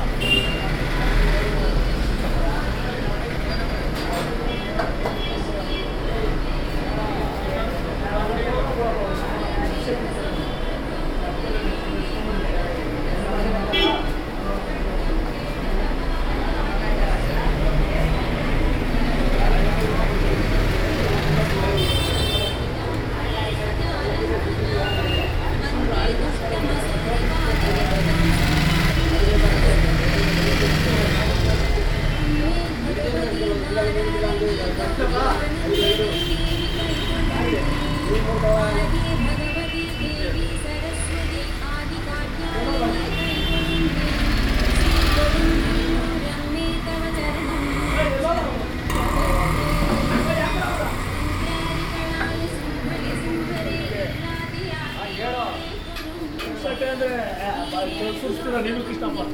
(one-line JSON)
{"title": "Bangalore, Sidda Ln, Chai", "date": "2009-11-14 14:37:00", "description": "India, Karnataka, Bangalore, Snack, Chai, bouiboui", "latitude": "12.97", "longitude": "77.58", "altitude": "913", "timezone": "Asia/Kolkata"}